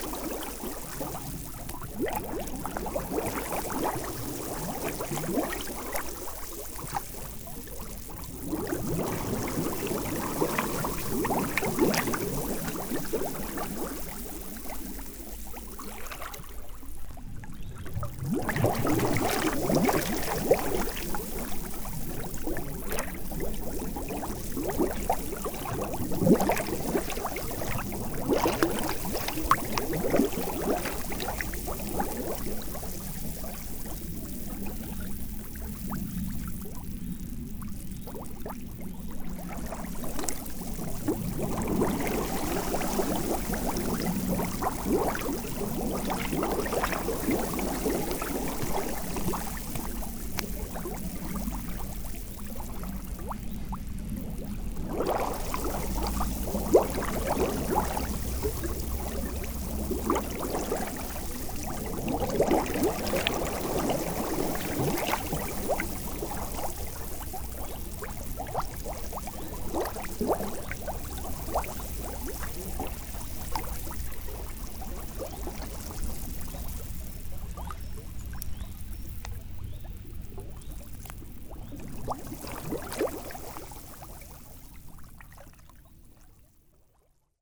Neuville-sur-Seine, France - Bubbles
When ther's no flow, the Seine river is very muddy. It's sludgy ! It's slimy ! While I'm walking into this mud, enormous bubble emanate in a curious ascent. It smells very bad, it's probably methane and hydrogen sulfide.
1 August